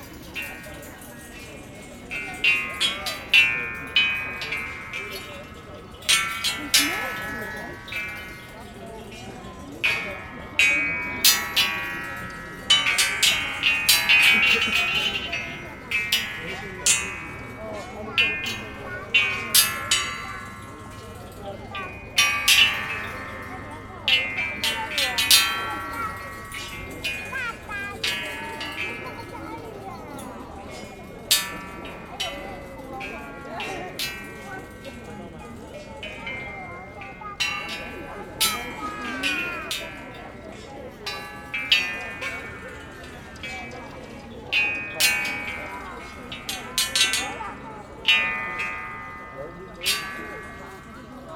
Castello, Venezia, Italie - Arsenale
Sound Installation at the Biennale Arsenale, Zoom H6